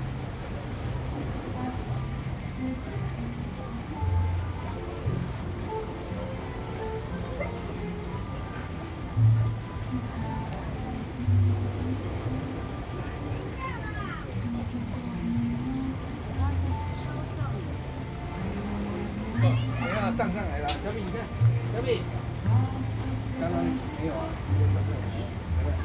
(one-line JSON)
{"title": "榕堤, Tamsui Dist., New Taipei City - Sitting next to the river bank", "date": "2015-08-24 17:06:00", "description": "Sitting next to the river bank, Sound wave, The sound of the river, Footsteps\nZoom H2n MS+XY", "latitude": "25.17", "longitude": "121.44", "altitude": "7", "timezone": "Asia/Taipei"}